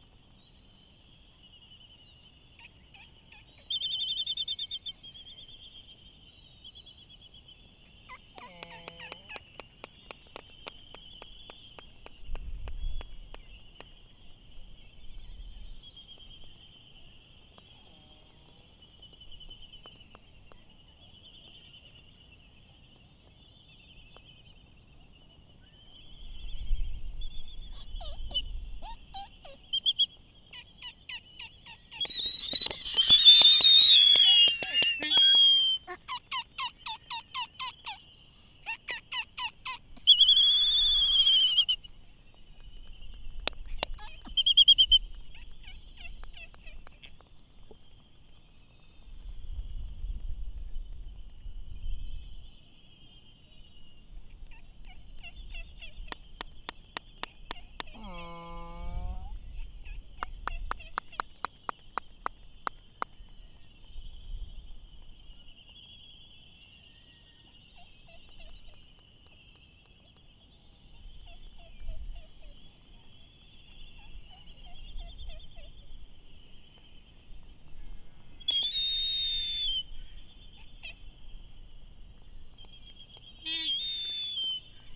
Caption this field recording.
Laysan albatross dancing ... Sand Island ... Midway Atoll ... open lavaliers on mini tripod ... back ground noise and windblast ...